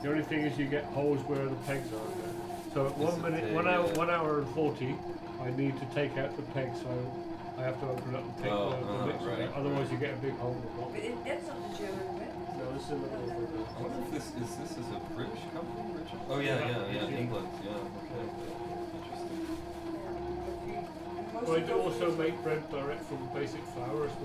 neoscenes: Rod's bread maker